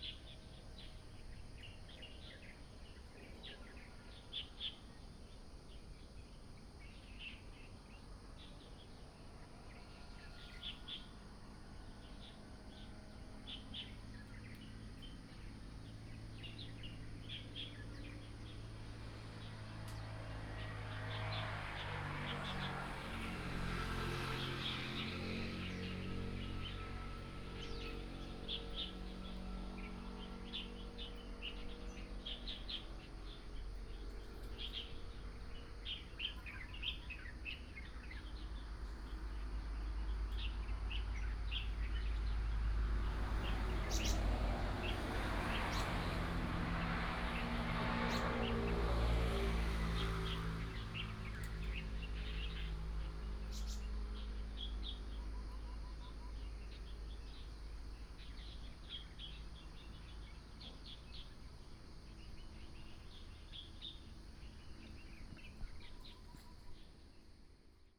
卓蘭鎮第三公墓, Miaoli County - A variety of bird sounds
Birds sound, Next to the grave, A variety of bird sounds, Binaural recordings, Sony PCM D100+ Soundman OKM II